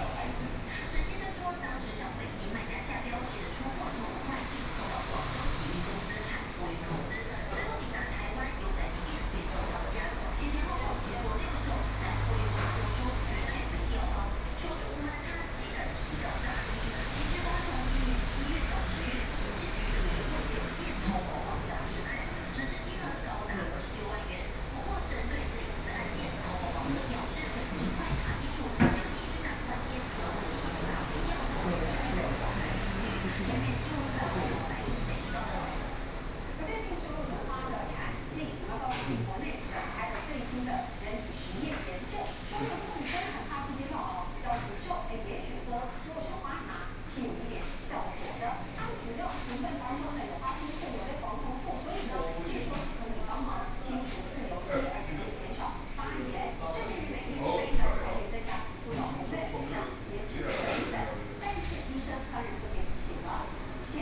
Inside the restaurant, TV news sound, Zoom H4n+ Soundman OKM II